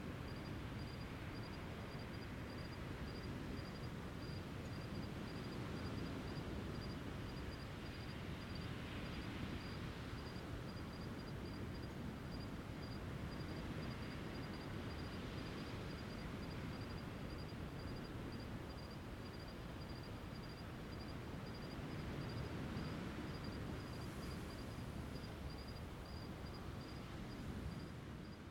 Longboat Key Beach Dune, Longboat Key, Florida, USA - Longboat Key Beach Dune
Evening sounds in the dunes
Florida, United States